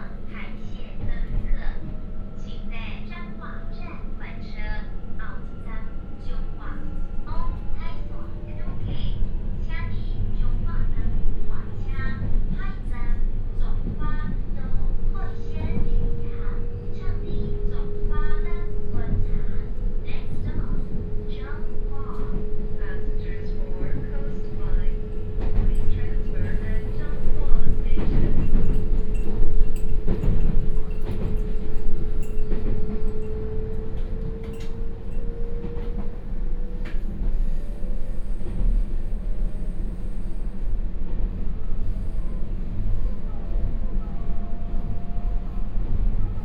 烏日區榮泉里, Taichung City - In the train compartment
In the train compartment, from Chenggong Station to Changhua Station
Taichung City, Taiwan, 6 April 2017, 08:47